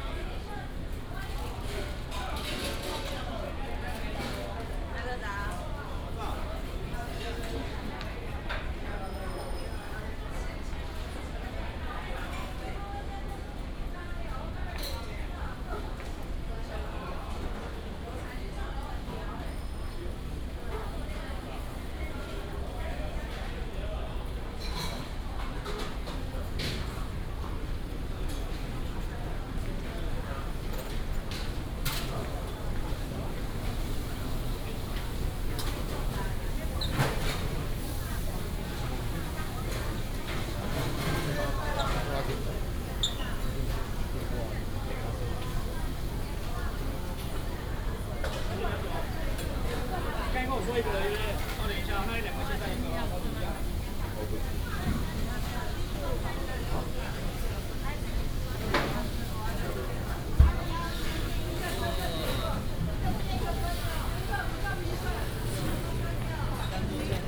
新竹都城隍廟, Hsinchu City - in the temple
Walking in the square of the temple, Many street vendors
Hsinchu City, Taiwan